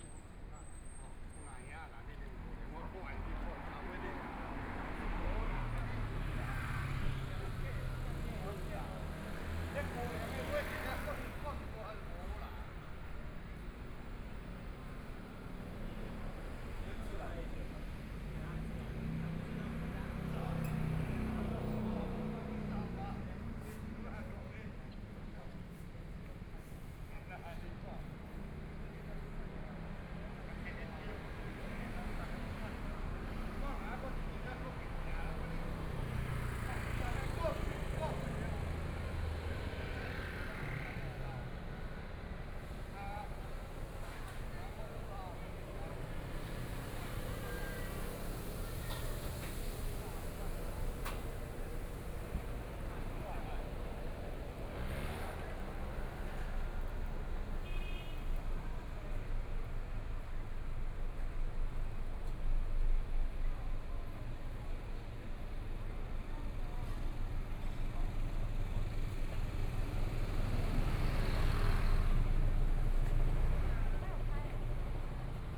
台北市中山區松江里 - In the Street
Environmental sounds, Walking In the Street, Motorcycle sound, Traffic Sound, Binaural recordings, Zoom H4n+ Soundman OKM II
Zhongshan District, Taipei City, Taiwan, 6 February, 17:56